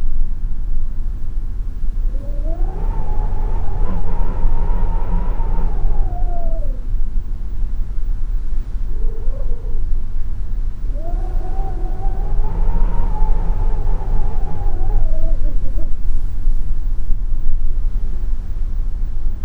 Windy Weather, North Atlantic Ocean - Wind
High winds outside causing air blowing under my cabin door during a transatlantic crossing.
MixPre 3 with 2 x Beyer Lavaliers